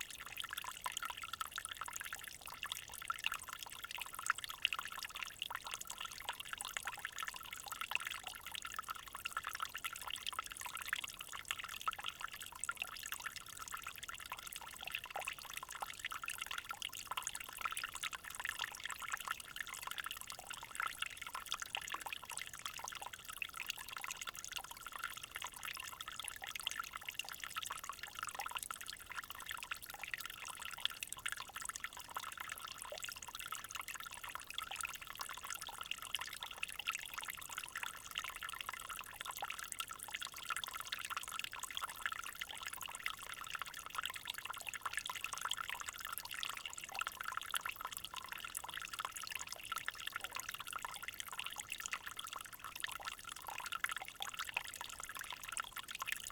Shut-in Cascade, Belgrade, Missouri, USA - Shut-in Cascade
Recording of a small cascade in a shut-in tributary of the Black River
Missouri, United States of America